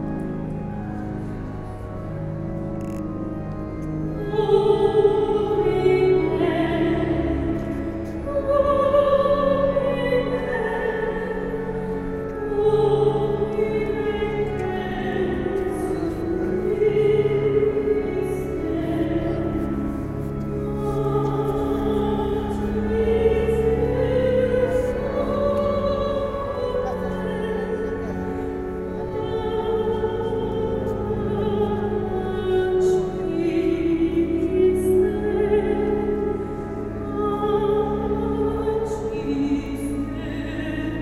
Duomo di Catania, Piazza Duomo, 95121 Catania CT, Italia (latitude: 37.50238 longitude: 15.08786)
si canta per lottava di S. Agata